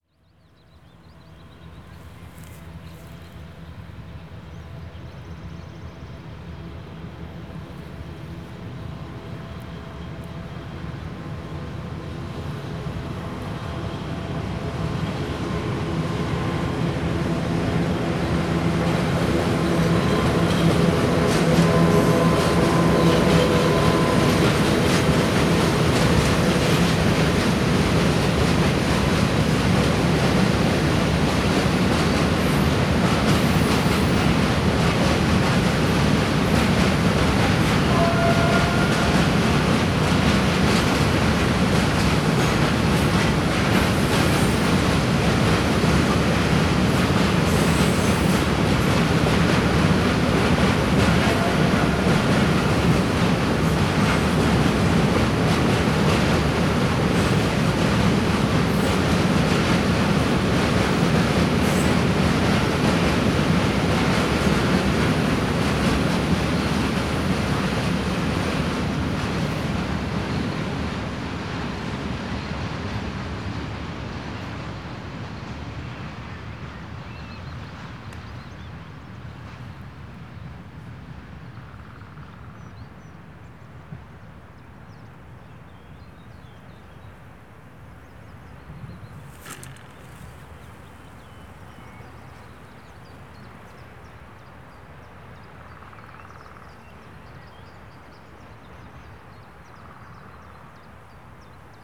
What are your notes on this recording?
freight train passing. then deep forest ambience that somehow escaped being overwhelmed by urban sounds of the industrial district of Poznan.